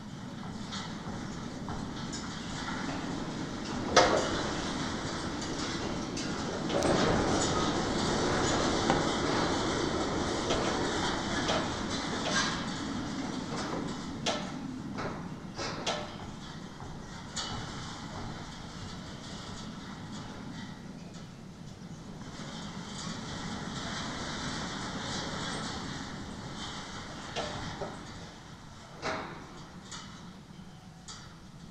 Hermankova ulica, Maribor, Slovenia - fence with vines and wind 2
this stretch, connected perpendicularly to the previous, had no vines directly attached, allowing the wind sounds to be clearer, and the adding the 'reverb' of distance to the percussive sounds coming through from the other stretch. both recordings made with contact mics.